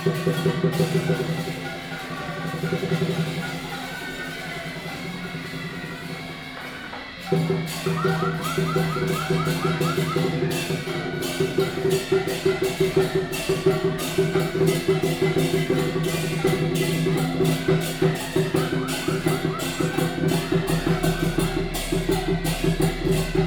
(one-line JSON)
{"title": "大仁街, Tamsui District - Traditional temple festivals", "date": "2015-06-20 11:01:00", "description": "Traditional temple festivals, Firecrackers", "latitude": "25.18", "longitude": "121.44", "altitude": "45", "timezone": "Asia/Taipei"}